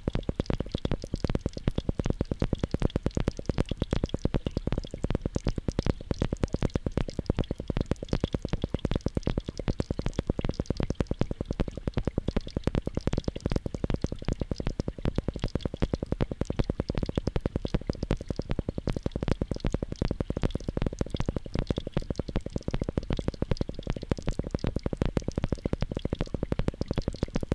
Hydrophone stereo drips part of the source material for Walking Holme, an audio and video installation for Holmfirth Arts Festival.